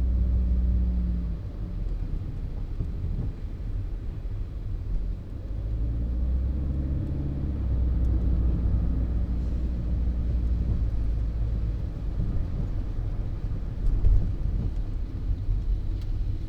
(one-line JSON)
{"title": "berlin, friedrichstraße: taxifahrt - the city, the country & me: taxi ride", "date": "2010-05-18 23:23:00", "description": "the city, the country & me: may 18, 2010", "latitude": "52.52", "longitude": "13.39", "altitude": "44", "timezone": "Europe/Berlin"}